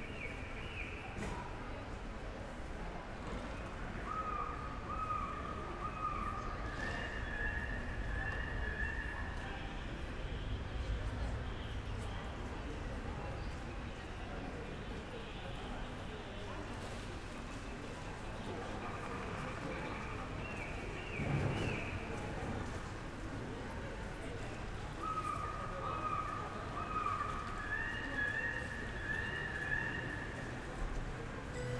Wrocław, Breslau, strolling around in Wrocław Główny main station
(Sony PCM D50, DPA4060)
Wrocław, Poland, October 2018